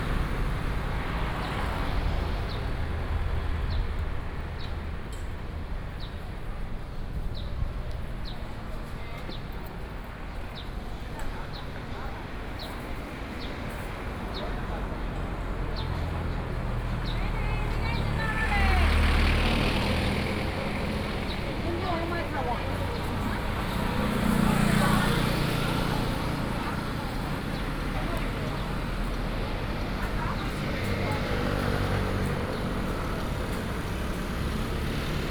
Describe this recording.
Traditional Market, Very hot weather, Traffic Sound